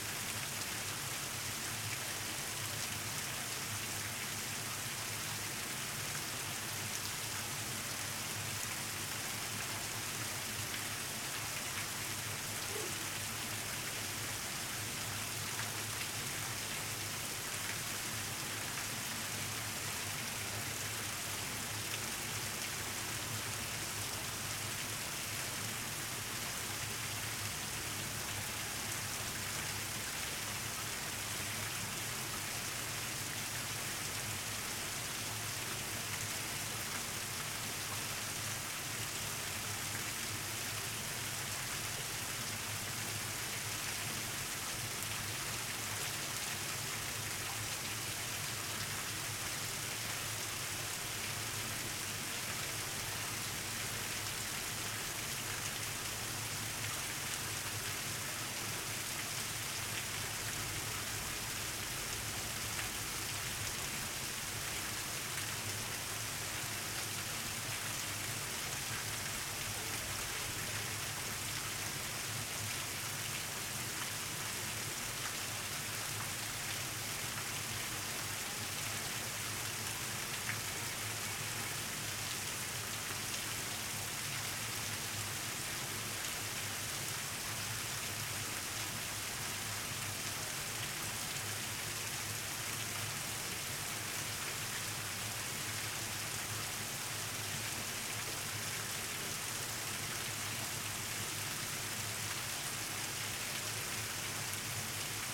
Rue du Moulin, Lugy, France - Moulin de Lugy - extérieur
Moulin de Lugy - côte d'Opale
Roue hydraulique
Ambiance extérieure